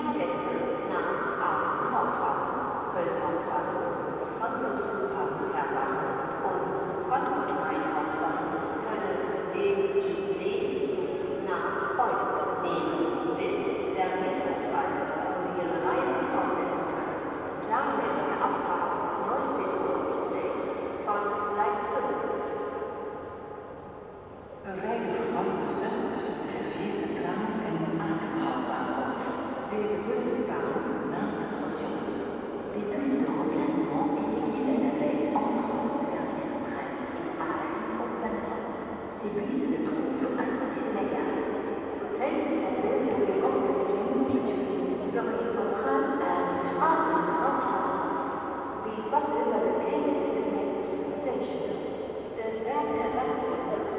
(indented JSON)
{
  "title": "Schaerbeek, Belgium - Announcements melting in the main station at Brussels North",
  "date": "2013-06-19 18:20:00",
  "description": "The huge vaulted ceiling in Brussels North resonates with all the announcements, and they melt in a way that reverberates the whole space.",
  "latitude": "50.86",
  "longitude": "4.36",
  "altitude": "32",
  "timezone": "Europe/Brussels"
}